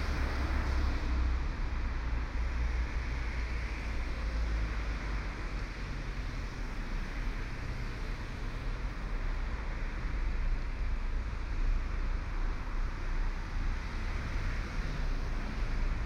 Newton Abbot by war memorial